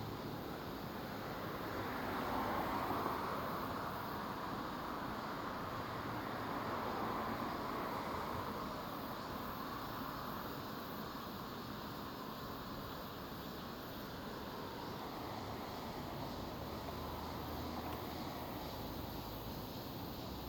대한민국 서울특별시 서초구 서초3동 1748-14 - Cicada, Cars passing by
Cicada, Cars passing by
매미, 자동차 지나가는 소리